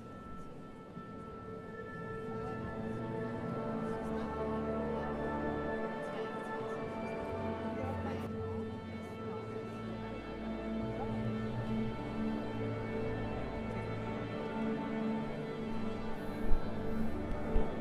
Musikvereinsplatz, Wien, Австрия - Tuning
Tuning before the concert. Großer Saal
Tonkünstler-Orchester Niederösterreich
Hugh Wolff
Ligeti Prokofjew Berlioz Sound recorded on a portable Zoom h4n recorder
Wien, Austria